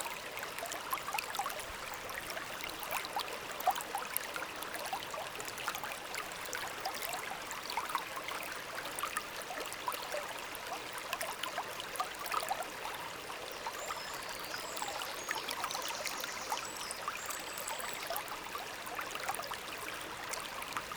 Oberwampach, Luxembourg - Wilz river
On a very bucolic and remote landscape, the Wilz river flowing quietly.